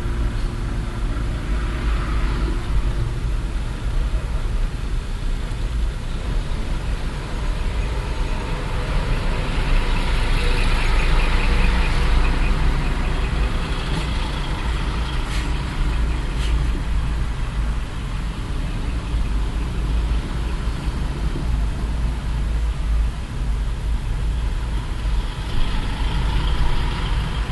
verkehrsgeräusche auf der A4 im nachmittags stau vor der ausfahrt düren
soundmap nrw: social ambiences/ listen to the people - in & outdoor nearfield
next exit düren, 8 June 2008, ~12:00